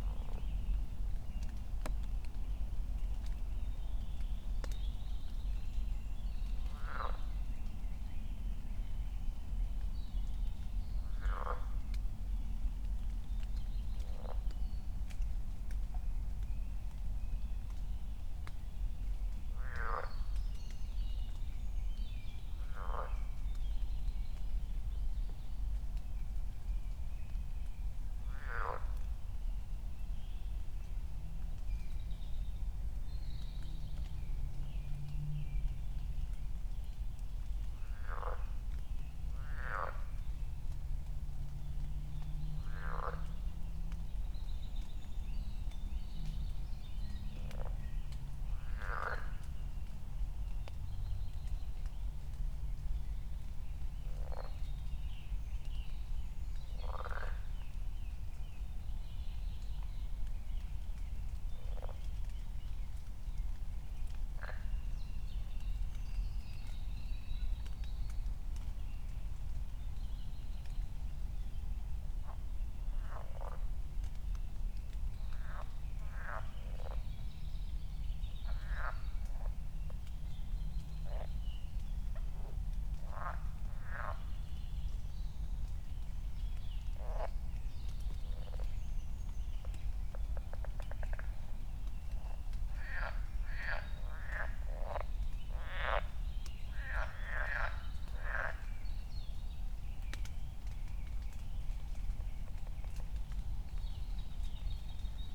Königsheide, Berlin - forest ambience at the pond
4:00 a deep drone, raindrops, frogs, first birds
Deutschland, 23 May 2020, 04:00